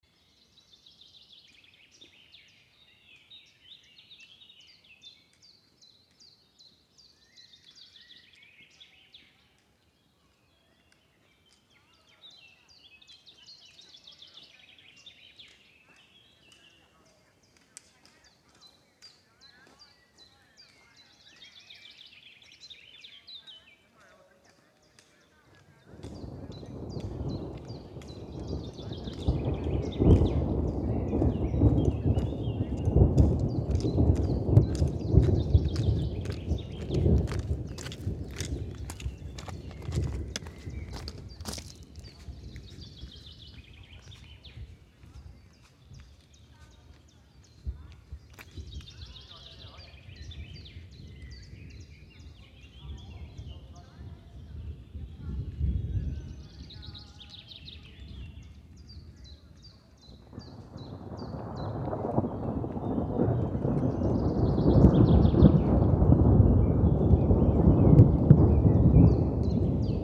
{"title": "V.Pribenis, Platak, field recording", "date": "2011-06-12 14:30:00", "description": "Field recording, soundscape. AKG mics via Sound Devices field mixer.", "latitude": "45.44", "longitude": "14.56", "altitude": "1109", "timezone": "Europe/Zagreb"}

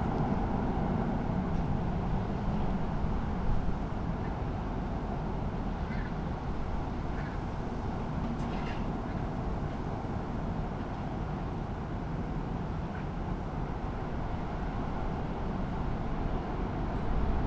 {"title": "Poznan, balcony - wind arrival", "date": "2015-04-13 08:02:00", "description": "strong wind over the city. a pile of papers flapping their pages and a plastic container moving in the wind.", "latitude": "52.46", "longitude": "16.90", "timezone": "Europe/Warsaw"}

